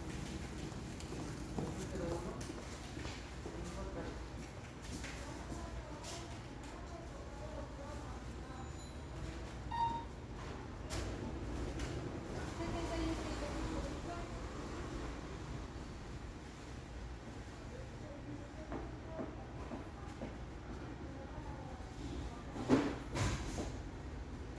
Market D1, in the afternoon. You can hear the sound of the cash registrer, people talking and walking, you can occasionally hear the cars passing outside. There are knocks from the organizers of the place in the background. Sound of coins and cash register. The falling of a coin sounds and finally, the sound of packaging.
Región Andina, Colombia, 18 May 2021